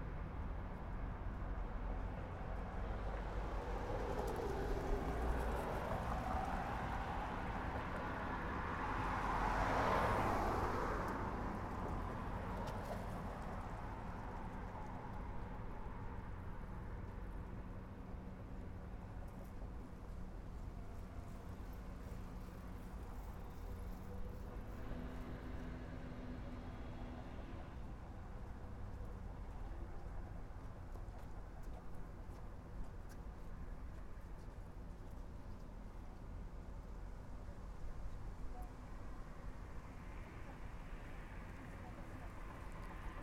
Серпуховская Застава, Москва, Россия - Sitting at a tram station
Sitting at a tram station and waiting for the tram home. Church bells can be heard as well as cars passing by and some iron screach. Finally my tram comes.